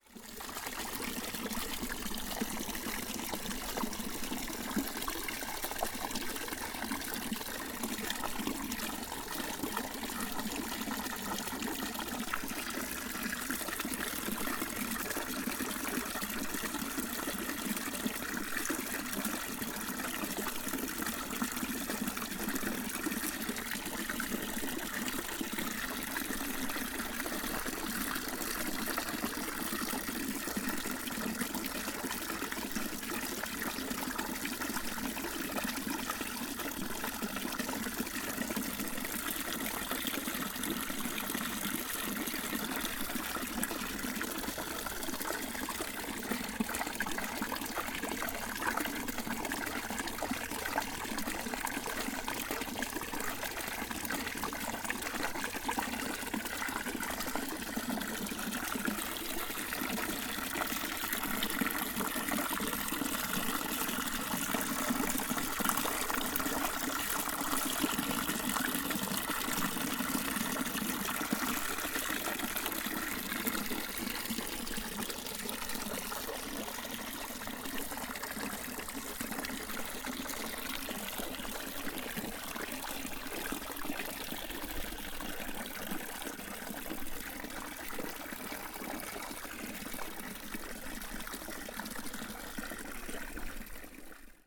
Capuchos Sintra, Lisbon, water fountain
Capuchos Convent, water fountain, forest, Sintra
Sintra, Portugal